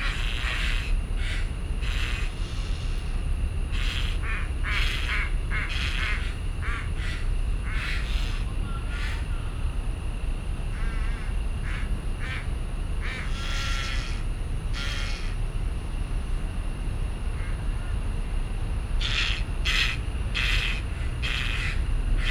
in the Park, Sony PCM D50 + Soundman OKM II